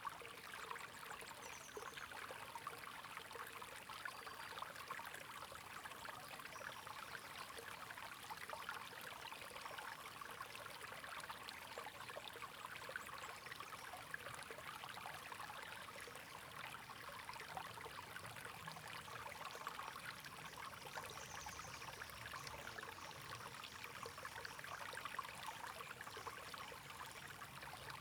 乾溪, 成功里, Puli Township - Small streams
Small streams
Zoom H2n MS+XY